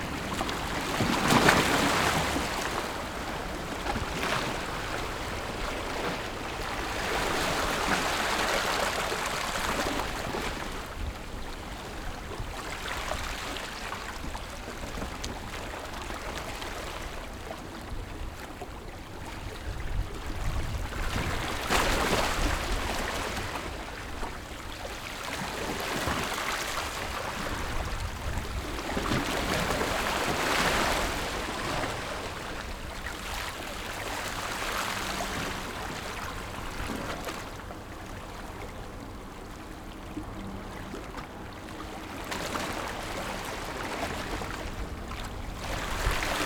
白沙港, Beigan Township - Small port
Sound of the waves, Very hot weather, Small port, Pat tide dock
Zoom H6 XY +Rode NT4